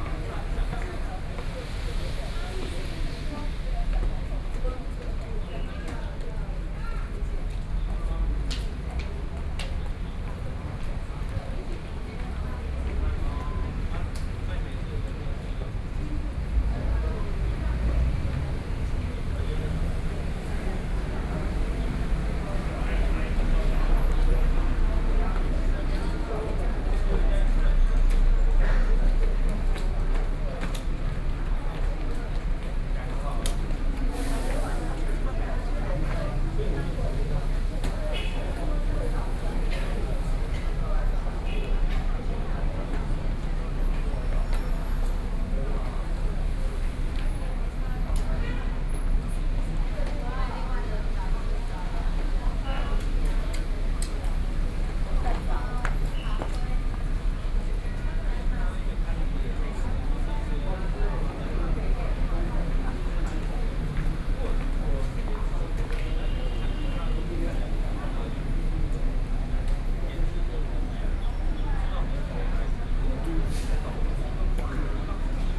NTU Hospital Station, Taipei City - MRT entrance
in the National Taiwan University Hospital Station, The crowd, Sony PCM D50 + Soundman OKM II
Taipei City, Taiwan, 9 October